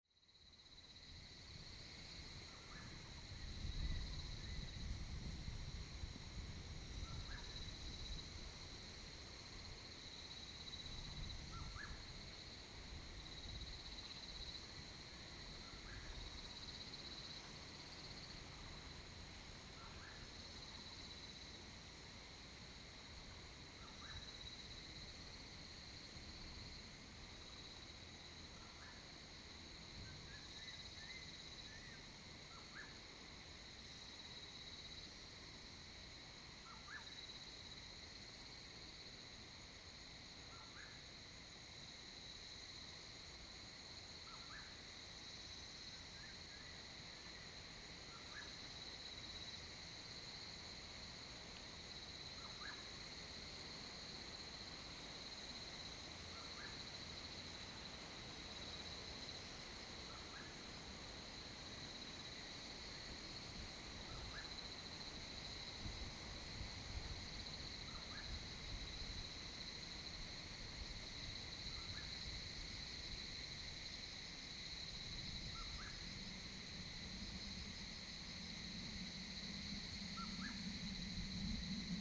200縣道30K, Manzhou Township - Mountain path
Various bird calls, wind sound, Insect noise, Cicadas cry, traffic sound, Next to the road in the mountains
Zoom H2n MS+XY